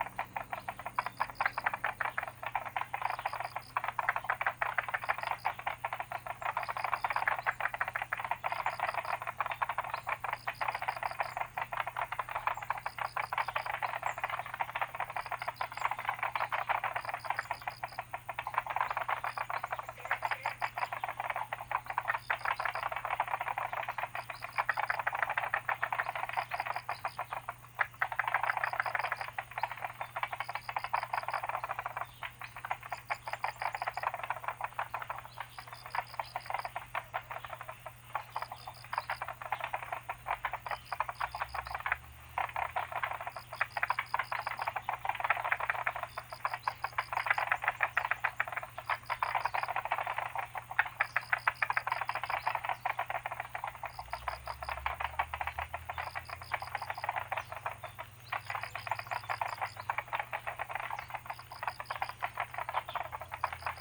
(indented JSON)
{
  "title": "草楠濕地農場, 桃米里, Puli Township - Frogs chirping",
  "date": "2016-04-25 16:07:00",
  "description": "Frogs chirping, Bird sounds\nZoom H2n MS+XY",
  "latitude": "23.95",
  "longitude": "120.92",
  "altitude": "592",
  "timezone": "Asia/Taipei"
}